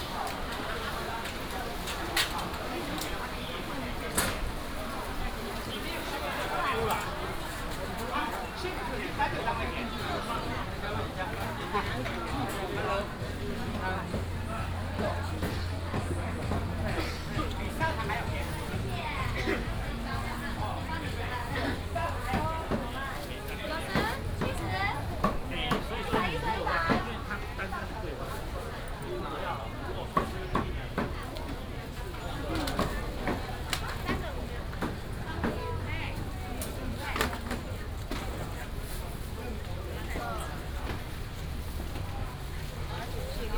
Minsheng St., Hukou Township - Outdoor traditional market
Outdoor traditional market, traffic sound, vendors peddling, Binaural recordings, Sony PCM D100+ Soundman OKM II